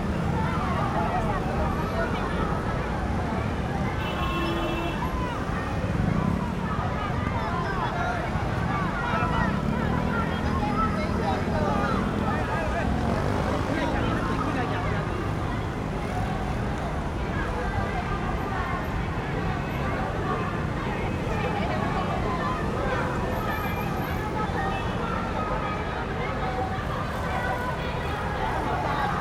Sec., Zhongxiao E. Rd., Taipei City - Election Parade
Traffic Sound, Election Parade
Zoom H4n + Rode NT4